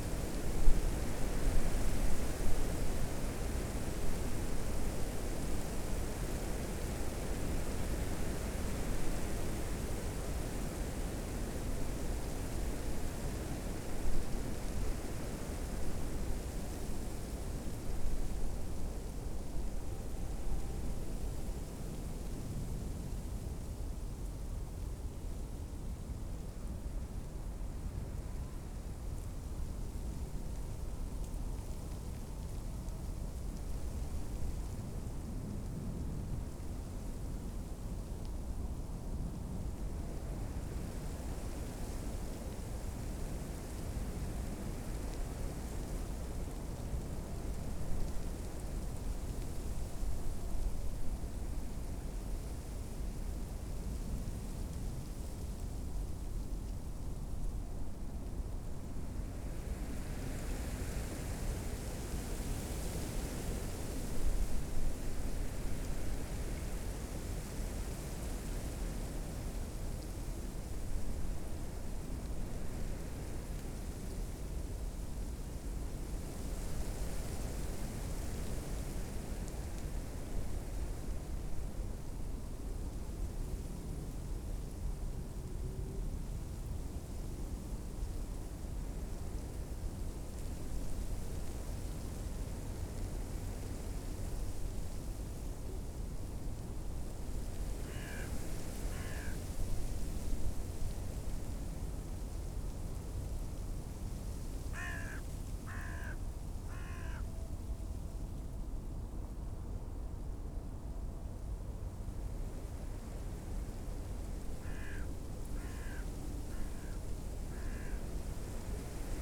Tempelhofer Feld, Berlin - wind in birch tree, helicopter
wind in the birch tree, traffic hum, a helicopter.
(SD702, SL502 ORTF)